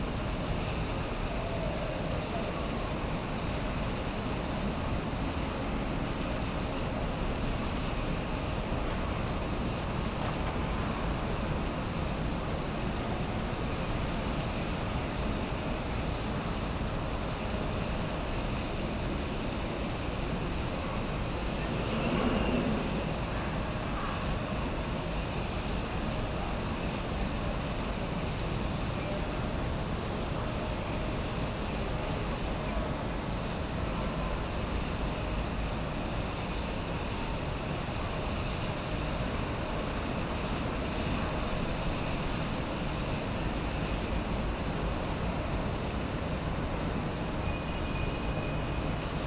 Construction Yard, Machine rumbling noise, Cicada
공사장, 굴삭기, 매미
서초구, 서울, 대한민국, 10 August 2019